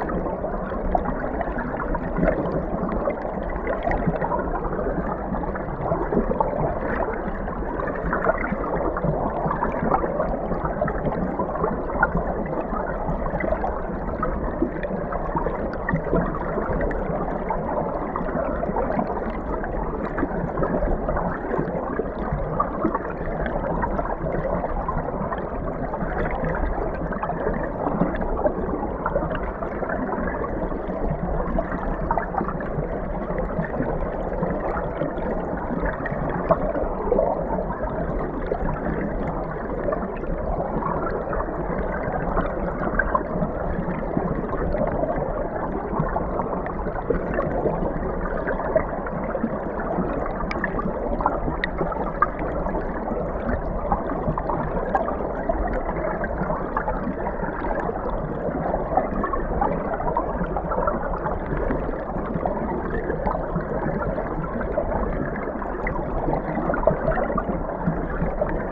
Meramec River, Valley Park, Missouri, USA - Meramec River Valley Park
Hydrophone recording of Meramec River at Valley Park
Missouri, United States